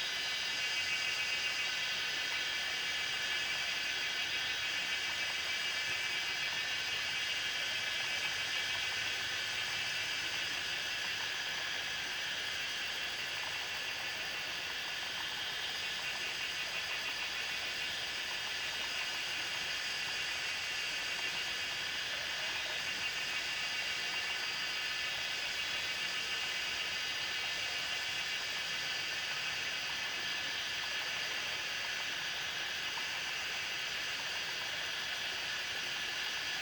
中路坑, 桃米里 - Cicada sounds and Frogs chirping
Cicada sounds, Frogs chirping
Zoom H2n MS+XY
Puli Township, 機車道, 17 May 2016, 18:36